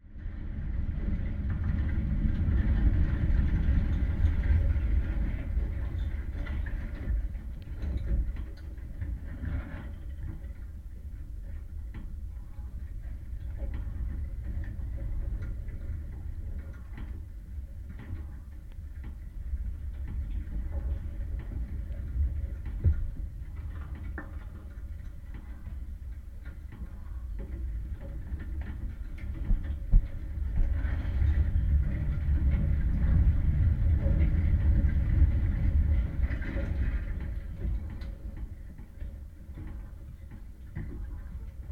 Chania 731 00, Crete, metallic fence
metallic fence in a vineyard. contact microphones
7 May 2019, 14:30